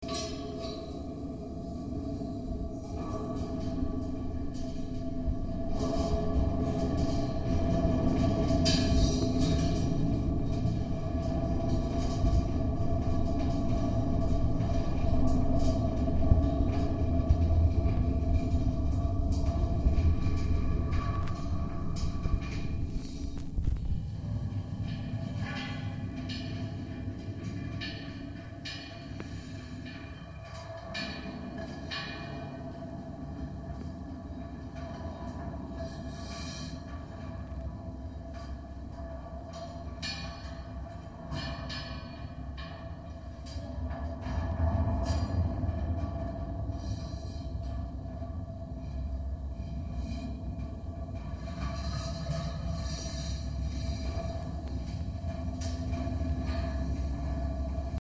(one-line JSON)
{"title": "Valley of the Giants Treetop Walk", "description": "well you may ask, is this really a bridge?\nyes between earth and sky!", "latitude": "-34.98", "longitude": "116.89", "altitude": "183", "timezone": "GMT+1"}